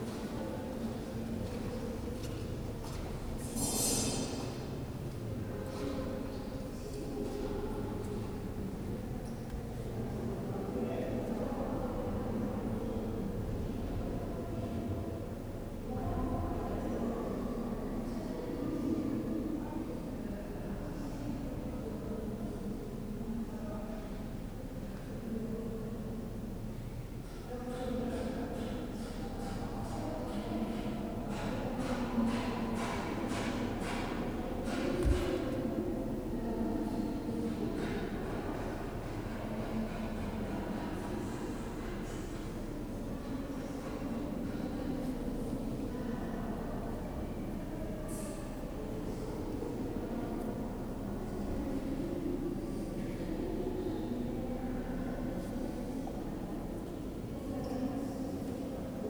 Rue de la Légion dHonneur, Saint-Denis, France - La Basilique de Saint-Denis (Lady Chapel)
The quietest spot in La Basilique de Saint-Denis with an almost constant stream of local people lighting candles and offering prayers (recorded using the internal microphones of a Tascam DR-40).